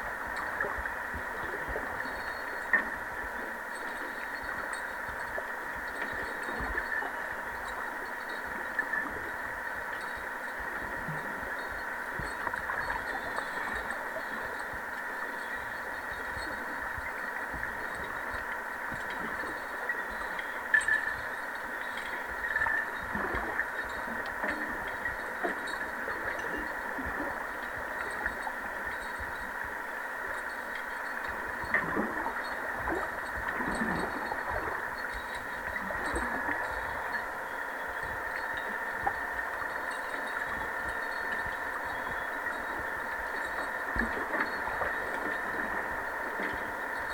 The sound is recorded underwater at the quay on Kronholmen in Härnösand. It's a strong wind. The sound is recorded with hydrophonic microphones.